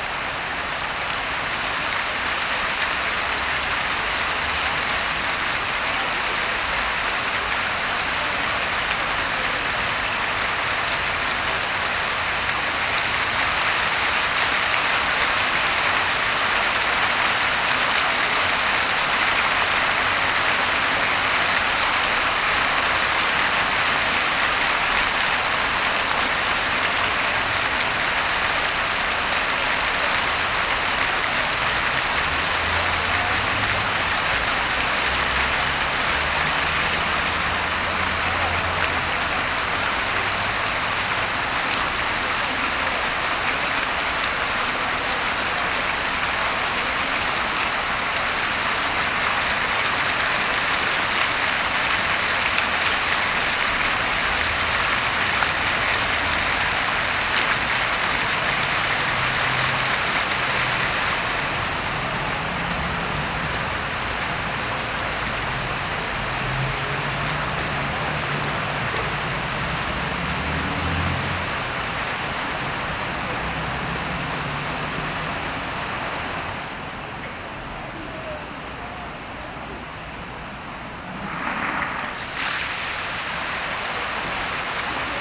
Rennes, Fontaine de la gare (droite)
Fontaine de droite au sol, jet vertical de la gare de Rennes (35 - France)
Rennes, France, 8 May 2011, ~10:00